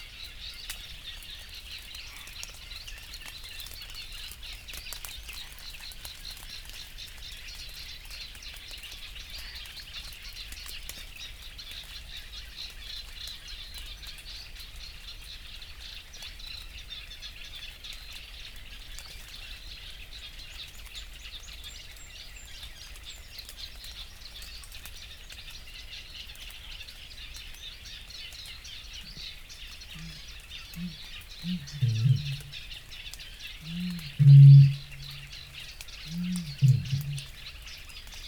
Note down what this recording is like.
Bitterns and rain drops ... bitterns booming ... the rain has stopped though droplets still fall from the trees ... bird calls and song from ... bitterns ... reed warblers ... reed bunting ... little grebe ... crow ... coot ... water rail ... gadwall ... cuckoo ... wood pigeon ... Canada geese ... to name a few ... open lavalier mics clipped to a T bar fastened to a fishing bank stick ... one blip in the mix ... and background noise ...